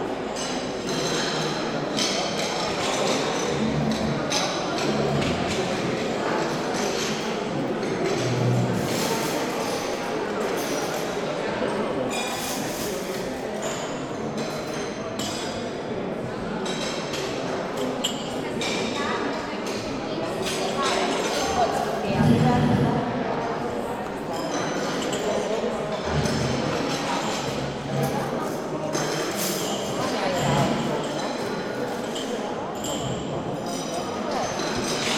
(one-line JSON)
{"title": "Dehrn, public hall, after funeral feast - after funeral feast, women collecting dishes", "date": "2008-08-06 16:35:00", "description": "wed 06.08.2008, 16:35\nafter funeral feast in the public hall, women cleaning up, collecting dishes", "latitude": "50.42", "longitude": "8.10", "altitude": "113", "timezone": "Europe/Berlin"}